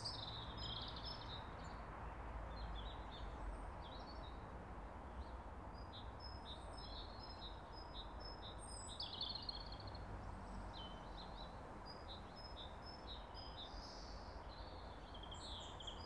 St Michael's Church, Lyndhurst, UK - 006 Birdsong, gunshots, bells